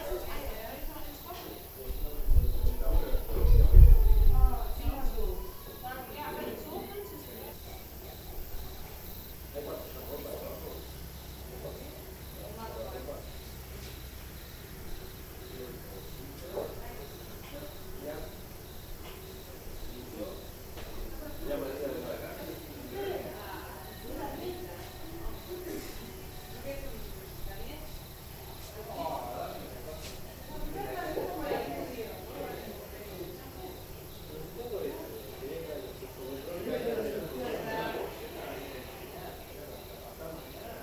Preparando la cena, Valizas, Uruguay - grillos y cena
Evening in the summerhouse. Friends are preparing dinner. The radio is playing. Some crickets.